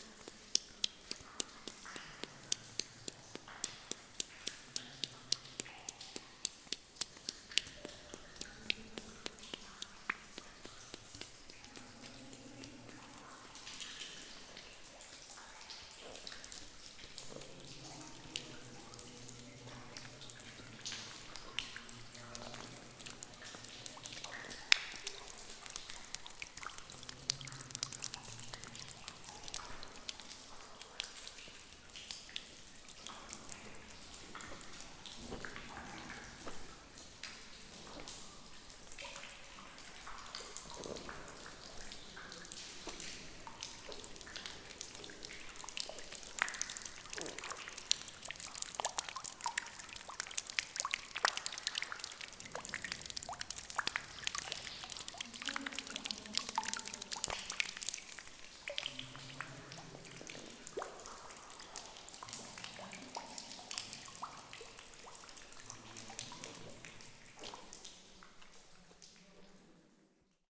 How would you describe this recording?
Very tiny sounds in the Hutberg abandoned undeground mine.